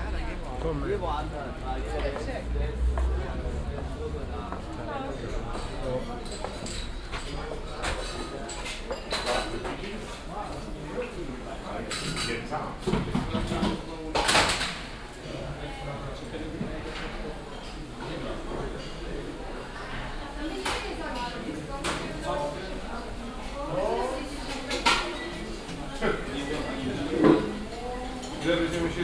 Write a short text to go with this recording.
eingangsbereich und gang durch das brauhaus, mittags. internationale stimmen, spülanlage, ausschank, gläserklirren, köbessprüche, soundmap nrw: social ambiences/ listen to the people - in & outdoor nearfield recordings, listen to the people